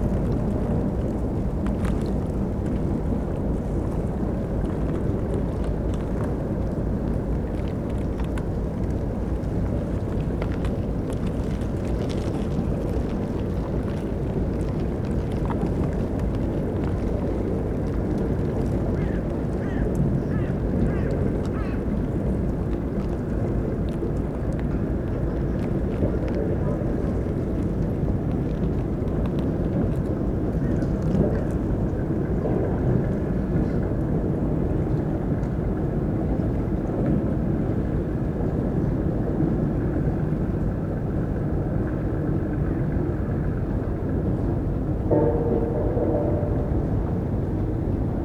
berlin, plänterwald: spreeufer, steg - the city, the country & me: icebreaker, coal barges
icebreaker opens a channel through the ice, coal barges on their way to the nearby power plant, cracking ice-sheets
the city, the country & me: february 12, 2012
Berlin, Germany, 12 February 2012